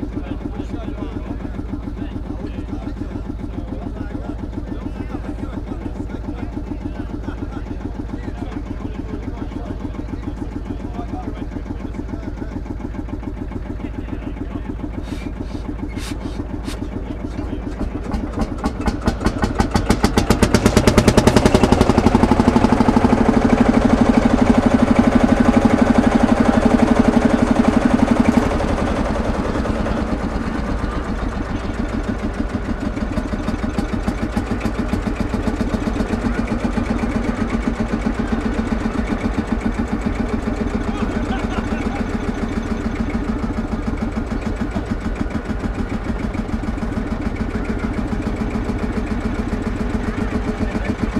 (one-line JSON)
{"title": "Thwing, UK - Field Marshall Tractors", "date": "2016-06-25 13:30:00", "description": "Recorded at a Farm machinery and Tractor sale ... the tractors are warmed up prior to the auction ... one tractor fires up followed by another at 04:40 approx. ... lavalier mics clipped to baseball cap ... focus tends to waiver as my head moves ...", "latitude": "54.11", "longitude": "-0.42", "altitude": "107", "timezone": "Europe/London"}